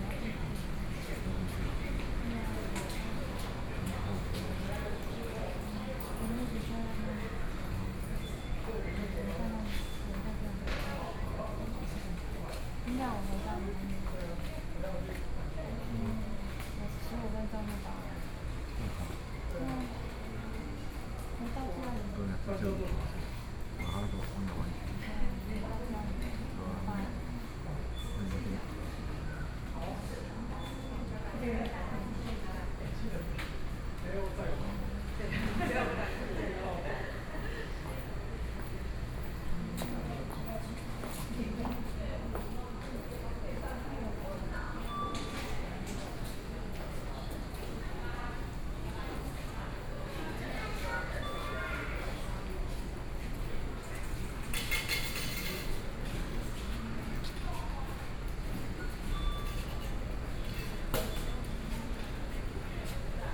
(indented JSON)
{
  "title": "Zhubei Station, Taiwan - Station hall",
  "date": "2013-09-24 19:41:00",
  "description": "The new station hall, Zoom H4n+ Soundman OKM II",
  "latitude": "24.84",
  "longitude": "121.01",
  "altitude": "28",
  "timezone": "Asia/Taipei"
}